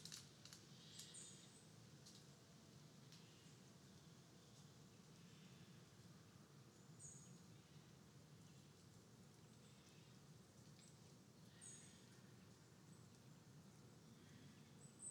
Schönbuch Nature Park, Heuberger Tor - Schönbuch Nature Park in autumn
Naturpark Schönbuch: Vögel, fallende Blätter und Nüsse
Schönbuch Nature Park: Birds, falling leaves and nuts
(Tascam DR-100MX3, EM172 (XLR) binaural)